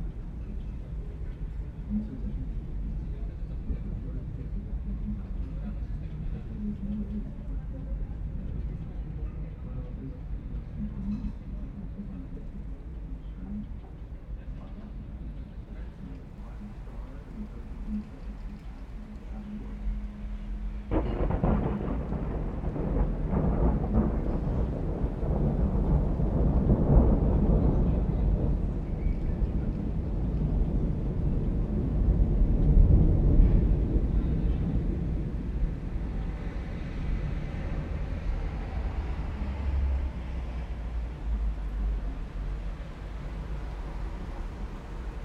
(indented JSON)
{
  "title": "Thunder (twice) on neighbourhood, Kraków, Polska - (646 AB 18cm) KRAKOW, July stormy weather",
  "date": "2020-07-18 16:25:00",
  "description": "Narrow (18cm) AB stereo recording.\nSennheiser MKH 8020, Sound Devices MixPre6 II",
  "latitude": "50.09",
  "longitude": "19.99",
  "altitude": "256",
  "timezone": "Europe/Warsaw"
}